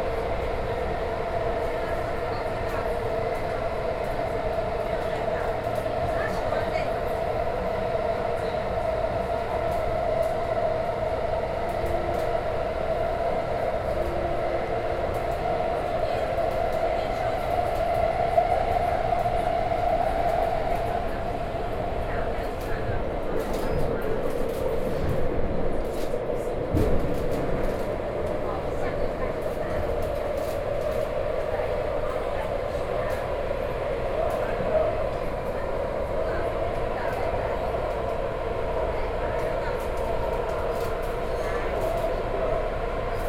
Taipei Bridge - in the MRT train